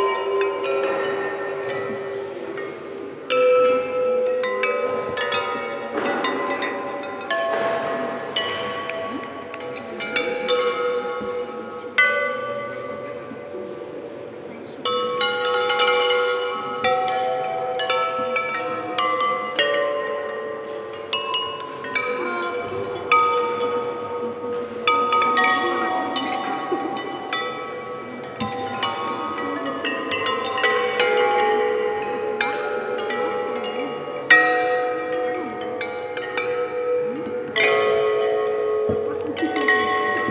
Vlamingenstraat, Leuven, Belgien - Leuven - Kadoc - clinamem - sound installation
Inside the old cathedral - the sound of a sound installation by Celeste Boursier-Mougenot entitled "clinamen" - part of the soun art festival Hear/ Here in Leuven. The sounds of floating porcelan bowls triggering each other while swimming in a round pool surrounded by
visitors talking.
international sound scapes & art sounds